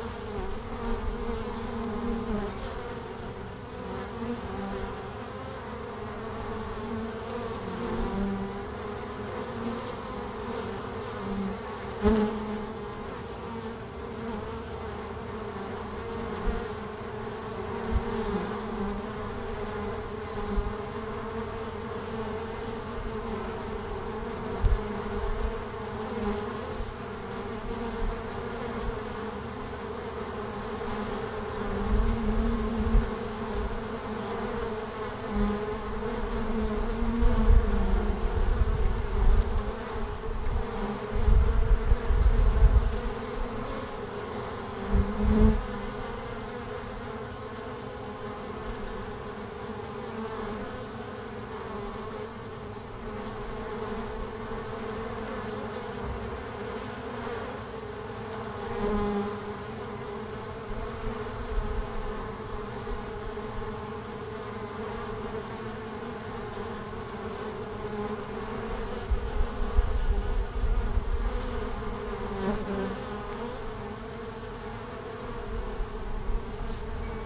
Langel, Köln, Deutschland - Bienen im März / Bees in march
20 ° C, in den Sonnenstundenfliegen fliegen die Bienen jetzt in großen Mengen aus. Sie finden sehr viel Pollen. Die erste große Menge Nektar werden die Bienen mit der Salweidenblüte finden.
Der Recorder lag direkt unter dem Einflugloch. Manche Bienen bleiben kurz im Windfell hängen.
20 ° C (68 F).
In the sun hours, the bees now fly in large quantities. They will find a lot of pollen. The first large quantity of nectar the bees will find in the flower of the goat willow.
The recorder was placed directly below the entrance hole. Some bees remain short hanging in the wind coat.